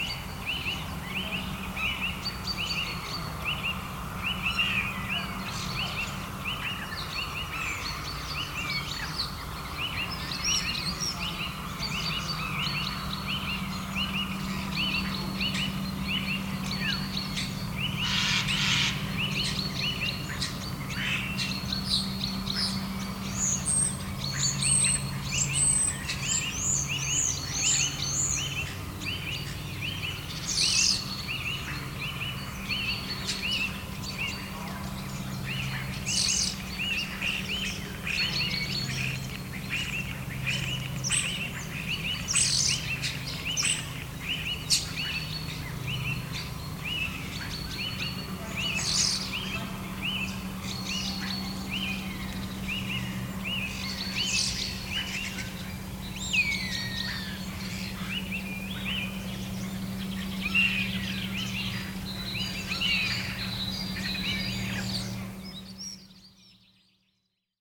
Kastre retirement centre, Estonia, birds in the park
starlings, birds, park, spring